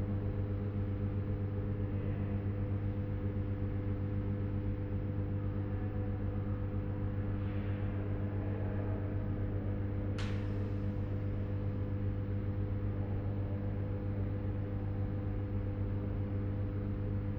Altstadt, Düsseldorf, Deutschland - Düsseldorf, Hetjens Museum
Inside an exhibition hall of the Hejens Ceramic Museum. The humming of the electricity and in the distance some voices out of the close by office in the silence of the hall.
This recording is part of the intermedia sound art exhibition project - sonic states
soundmap nrw - sonic states, social ambiences, art places and topographic field recordings